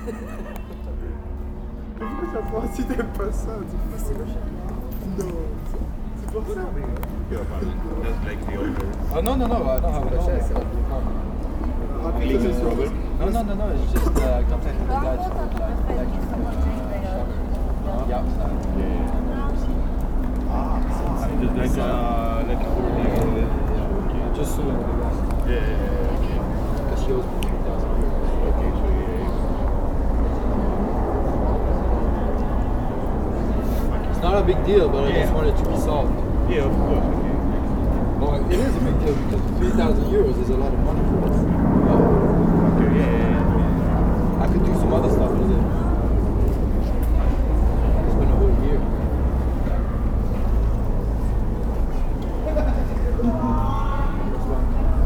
Old Town, Klausenburg, Rumänien - Cluj - Napoca - Piata Muzeuli - Market
At the the Piata Muzeuli on a warm and sunny spring day. The last sounds of the church bell and people on the street talking in different languages. Nearby a small market with people selling traditional first of march flowers.
soundmap Cluj- topographic field recordings and social ambiences